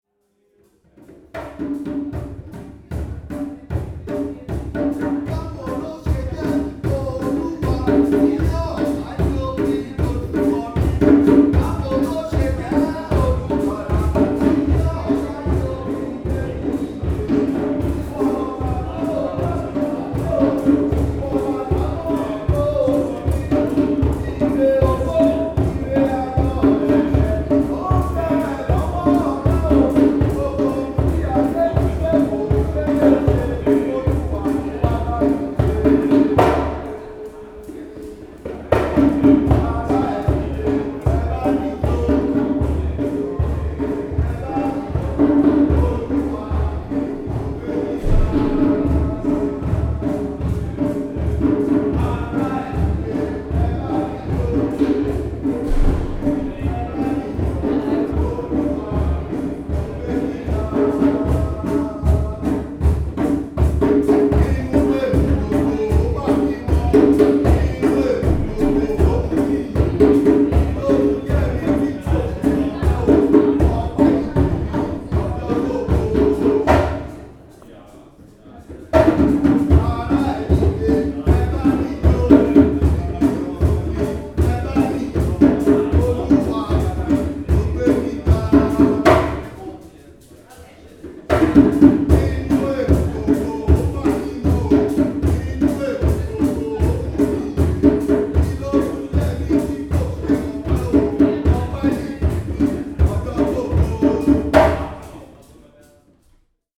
VHS, Hamm, Germany - Welcome with drums...
Yemi Ojo welcomes the guests with drums...
These recordings were made during the "Empowerment Day" of Yes-Afrika e.V. in Hamm, Germany.